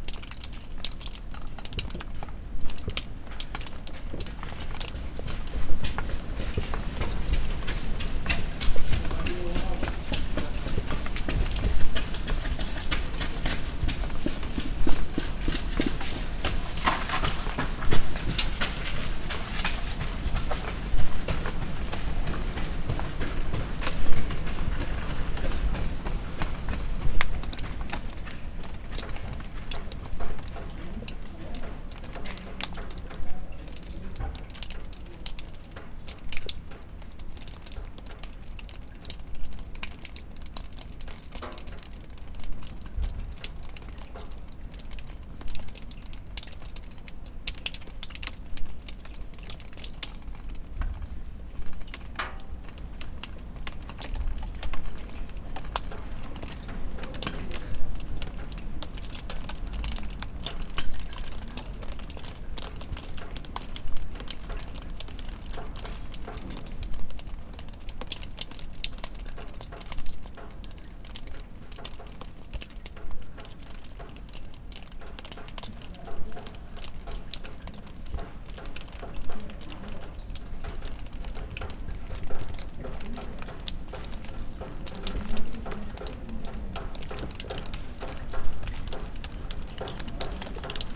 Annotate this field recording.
On the old castle stairs one can meet fast runners in the evening. After the midnight last tourists disappear and you could have the feeling that the mystic atmosphere, which unfortunately got lost long time ago.